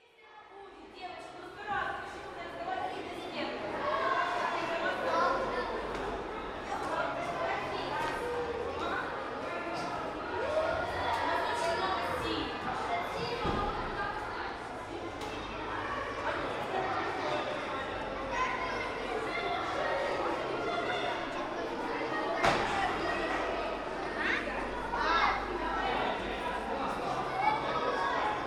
Palace of children and youth, Dnipro, Ukraine - Palace of children and youth [Dnipro]
Dnipropetrovsk Oblast, Ukraine, 28 May 2017, ~17:00